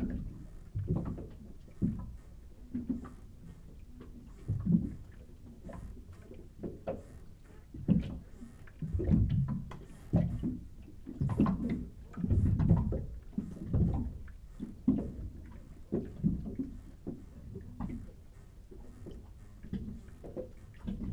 Polymer wharf at Hwapo Maeul 화포 마을 부두
...Lunar New Year...mid-winter night...remarkably quiet Korea
전라남도, 대한민국, 25 January, 23:00